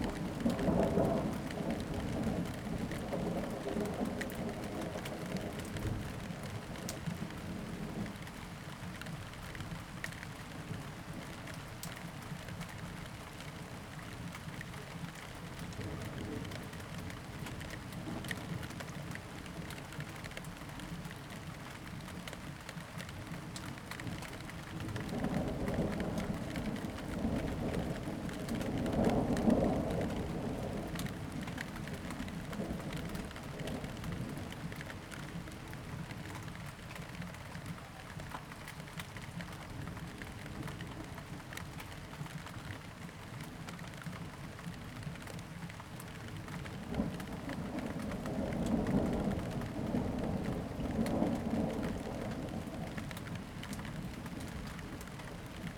Ascolto il tuo cuore, città. I listen to your heart, city. Several chapters **SCROLL DOWN FOR ALL RECORDINGS** - Wednesday afternoon with storm and rain in the time of COVID19 Soundscape
"Wednesday afternoon with storm and rain in the time of COVID19" Soundscape
Chapter XCVI of Ascolto il tuo cuore, città. I listen to your heart, city
Wednesday, June 3rd 2020. Fixed position on an internal terrace at San Salvario district Turin, eighty-five days after (but day thirty-one of Phase II and day eighteen of Phase IIB and day twelve of Phase IIC) of emergency disposition due to the epidemic of COVID19.
Start at 5:02 p.m. end at 5:48 p.m. duration of recording 45’32”
Piemonte, Italia, 3 June 2020, 17:02